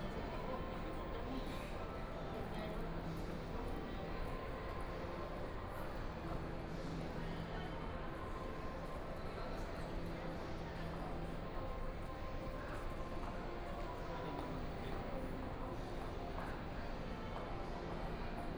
Lujiazui Finance and Trade Zone, Shanghai - mall
Sitting inside mall, Binaural recording, Zoom H6+ Soundman OKM II
Pudong, Shanghai, China, November 2013